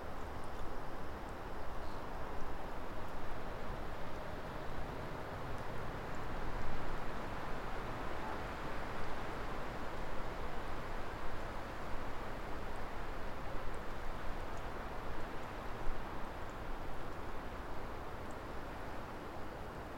Woodcote, UK - Greenmore Ponds 10.30pm
The wind blowing through the birch woodland, distant train, plane and traffic noise predominate. Less obvious is the constant high pitch popping sound of bubbles on the surface of the pond. The occasional quacking of a duck, creaking of trees in the breeze and train whistle from the mainline down the hill in Goring and Pangbourne can also be heard. A car from Long Toll turns into Greenmore at the end of the recording. Tech notes: spaced pair of Sennheiser 8020s at head height recorded onto SD788T with no post-pro.